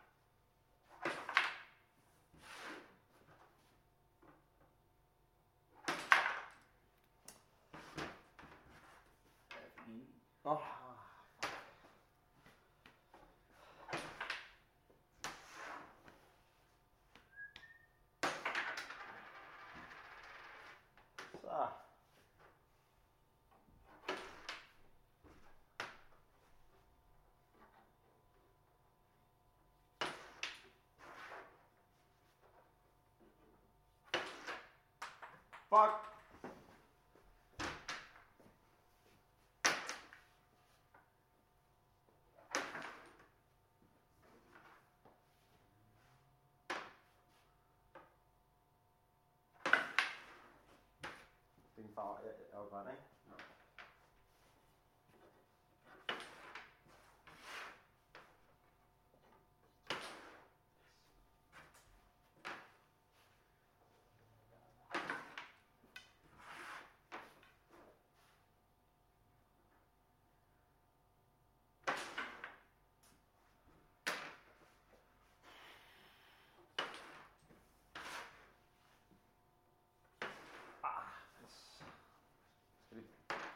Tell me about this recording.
Two guys playing bob at Krogerup Højskole